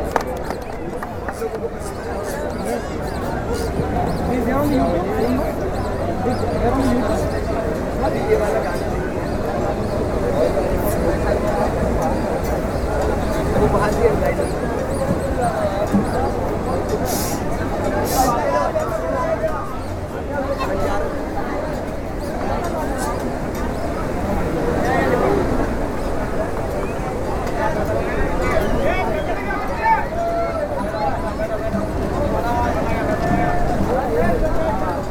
Theosophical Housing Colony, Juhu, Mumbai, Maharashtra, Inde - Juhu beach by night
At night, families enjoy their meal or a bath. Live music is played and balloon sellers try to attract customers.